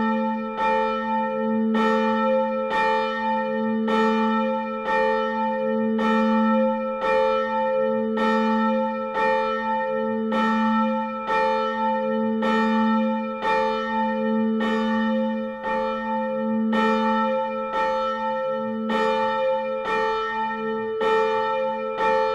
Lessines, Belgique - Lessines bells
Manual ringing of the three bells of the Lessines church.
Lessines, Belgium, June 2014